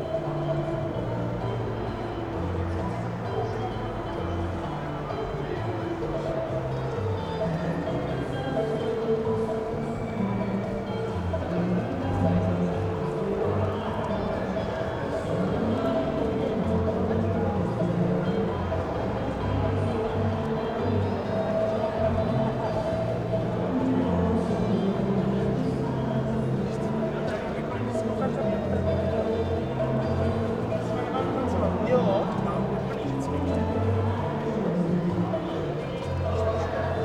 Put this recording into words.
Recorded on Zoom H4n + Rode NTG 1, 14.10. 2015 around midnight.